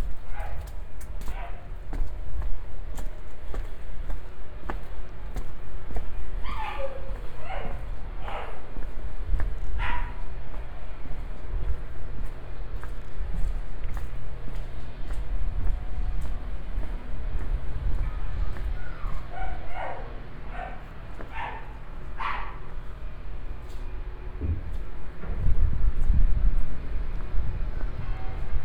Cuenca, Cuenca, España - #SoundwalkingCuenca 2015-11-27 A soundwalk through the San Antón Quarter, Cuenca, Spain
A soundwalk through the San Antón quarter, Cuenca, Spain.
Luhd binaural microphones -> Sony PCM-D100.